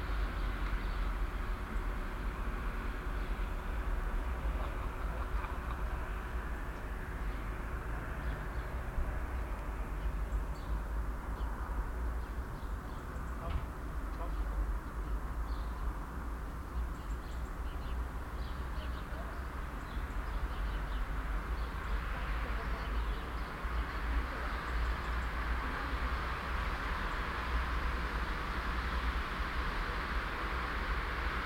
Brückenstraße, Wrist, Deutschland - Sunday morning in the countryside
December Sunday morning on a street in a small rural town. Mostly traffic from the near main road, some chirping birds, and very quiet a few pedestrians talking and coughing in a distance.
Binaural recording, Soundman OKM II Klassik microphone with A3-XLR adapter and windshield, Zoom H6 recorder.
Wrist, Germany, 17 December 2017